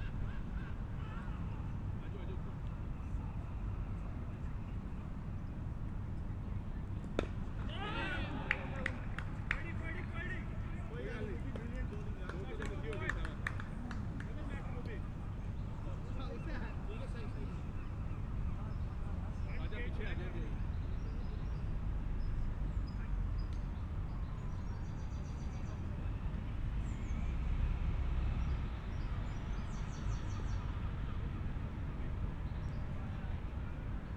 Houses, Dublin, Irlande - Cricket in Trinity college
Cricket match in Trinity college between an irish and indian team.
Evening time after a short rain, temp aroud 20°C 50m away
Recording devices : Sound device Mix pre6 + 2 Primo EM172 AB30cm setup